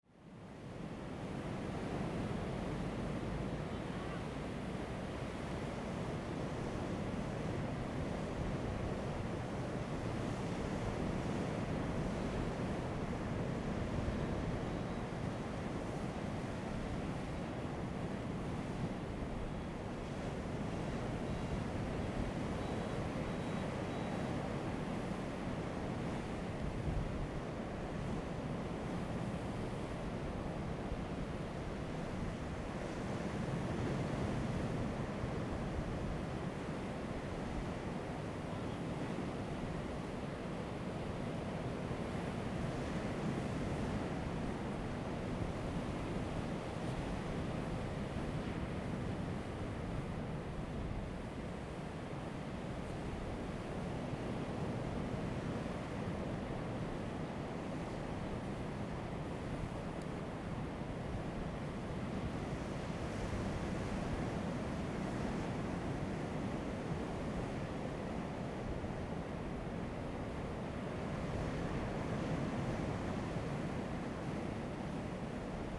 Waterfront Park, Sound of the waves, The weather is very hot
Zoom H2n MS +XY
Taitung County, Taiwan, 6 September 2014